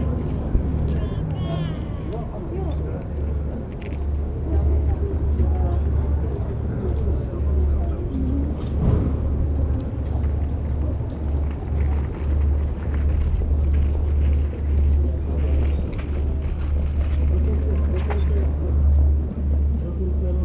{"title": "Národní Praha, Česká republika - Hlava", "date": "2014-11-19 13:26:00", "description": "Ambience of the little square behind the new bussine center Quadro with kinetic huge sculpture by David Černý.", "latitude": "50.08", "longitude": "14.42", "altitude": "207", "timezone": "Europe/Prague"}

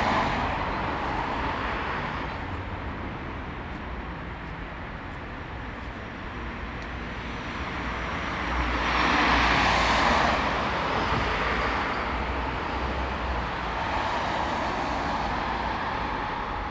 {"title": "Bulevardul Alexandru Vlahuță, Brașov, Romania - Boulevard traffic and sonic memories", "date": "2021-01-02 16:20:00", "description": "The soundscape of today versus the soundscape of a memory…This is a recording I made today of the place where I used to go to elementary school. What you hear now is medium traffic, rhythmic, mostly made out of small cars and vans. Twenty years ago it was very different, there was no diverting route in my town for heavy traffic so lorries used to drive through this very street. There used to be a tram line as well carrying people from one end of the city to the other (later it was dismantled). The rhythm was much more syncopated as heavy traffic was not all throughout the day, but was noisy, loud and low-frequency-based. The tram was the constant, with its metallic overtones. Now all of these are just sonic memories, sonic flashbacks that the mind brings when all it can hear is traffic, traffic, traffic. Recorded with Zoom H2n in surround mode", "latitude": "45.66", "longitude": "25.62", "altitude": "573", "timezone": "Europe/Bucharest"}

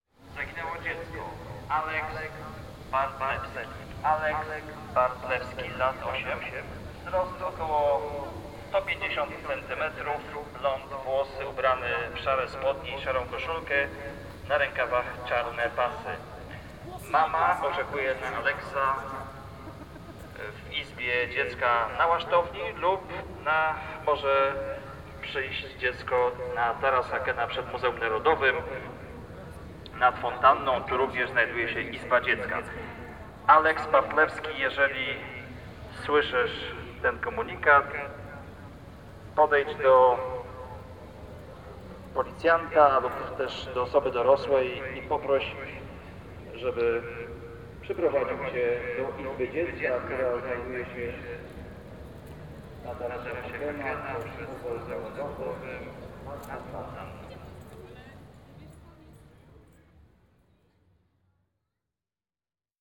{"title": "Wały Chrobrego, Szczecin, Poland - (268 BI) Lost kid announcement", "date": "2017-08-04 12:07:00", "description": "Binaural recording of an announcement calling for the lost kid during Tall Ship festival.\nRecorded with Soundman OKM on Sony PCM D100", "latitude": "53.43", "longitude": "14.57", "altitude": "1", "timezone": "Europe/Warsaw"}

